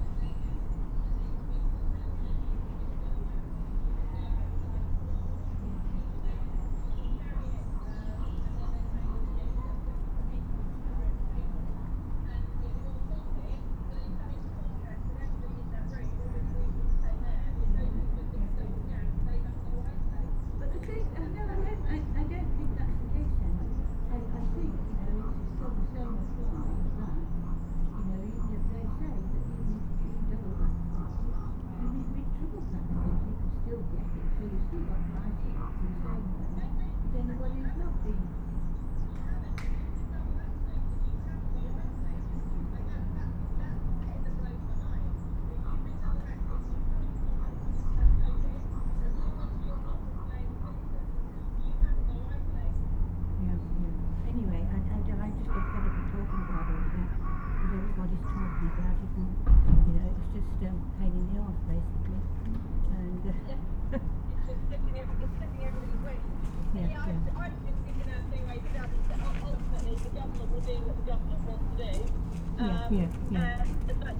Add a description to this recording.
MixPre 6 II with 2 Sennheiser MKH 8020s. The microphones are in a 180 degree configuration and placed on the floor to maximise reflected sound capture.